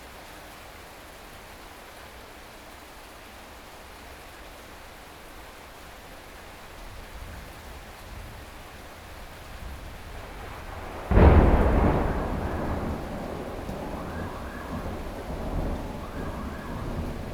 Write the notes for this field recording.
Thunderstorm, Zoom H4n+ Soundman OKM II +Rode NT4, Binaural recordings